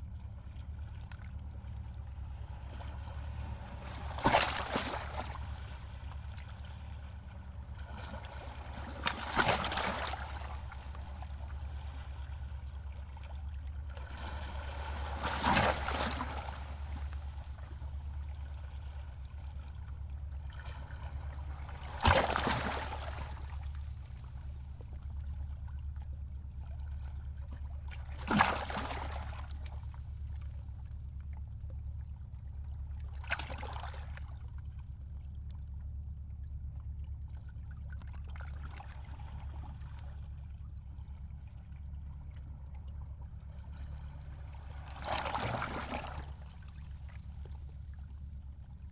Georgioupoli, Crete, amongst the stones
small microphones amongst the jetty stones